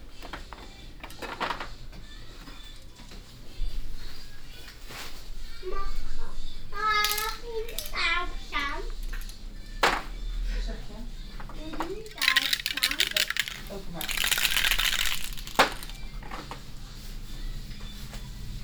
in de dumpstore
dumpstore of the shoppingcentre